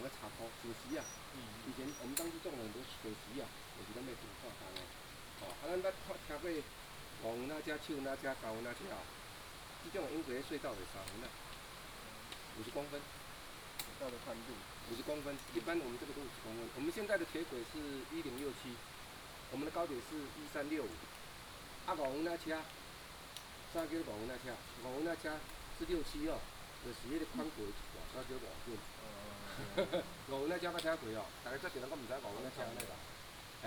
樹梅坪古道, 新北市瑞芳區 - Entrance to the ancient trail
Entrance to the ancient trail
Sonu PCM D100 XY